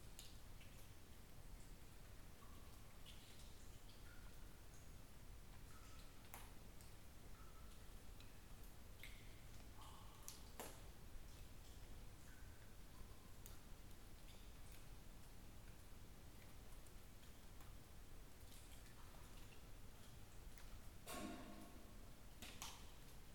Saint Neot, Wielka Brytania - inside cavern
soundscape inside Carnglaze Cavern